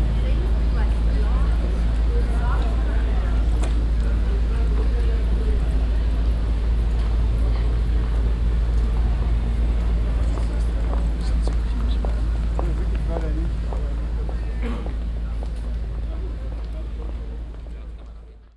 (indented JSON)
{
  "title": "Düsseldorf, Altstadt, Bolkerstrasse - düsseldorf, altstadt, bolkerstrasse",
  "date": "2009-01-12 16:24:00",
  "description": "Mittags in der Fussgängerzone der Düsseldorfer Altstadt, Baulärm, im Hintergrund eine Strassenbahn in der Kurve, Passanten\nsoundmap nrw - topographic field recordings, listen to the people",
  "latitude": "51.23",
  "longitude": "6.77",
  "altitude": "42",
  "timezone": "Europe/Berlin"
}